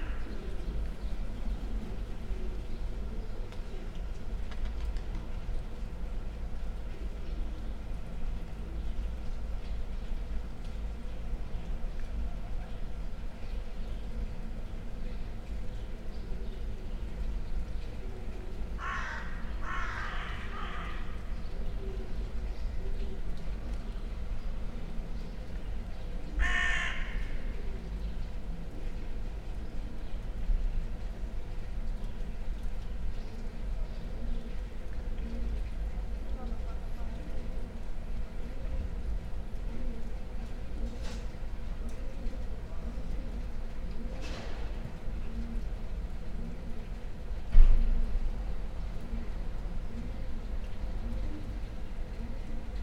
from/behind window, Mladinska, Maribor, Slovenia - crows, pigeons, melting snow
crows, pigeons, melting snow